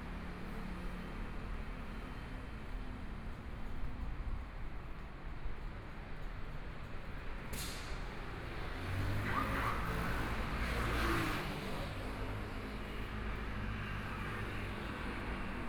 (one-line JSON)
{"title": "Sec., Chang'an E. Rd., Zhongshan Dist. - soundwalk", "date": "2014-02-08 14:43:00", "description": "walking on the Road, Traffic Sound, Motorcycle Sound, Pedestrians on the road, Various shops voices, Binaural recordings, Zoom H4n+ Soundman OKM II", "latitude": "25.05", "longitude": "121.53", "timezone": "Asia/Taipei"}